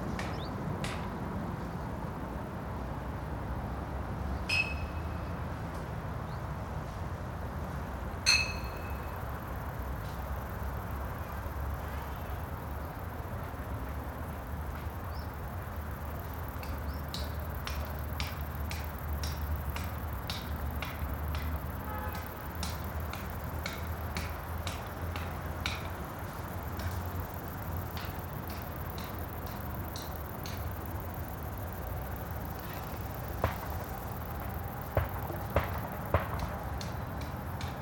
Weststation, Molenbeek-Saint-Jean/Sint-Jans-Molenbeek, Belgien - Wasteland Weststation
A sunny saturday afternoon: working sounds, crickets and birds near the rail tracks. Traffic in the distance.